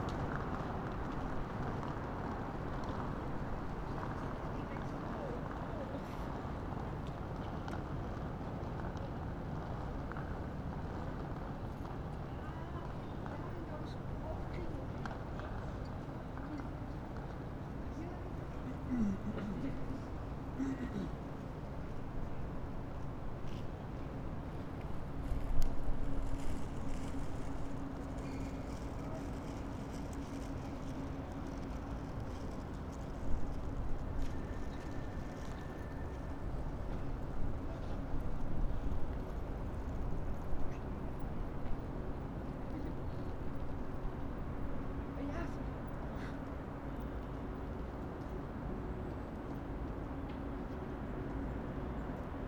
cologne, main station, vorplatz, mittagsglocken - night ambience on square
Cologne main station, main square night ambience
(Sony PCM D50, internal mics)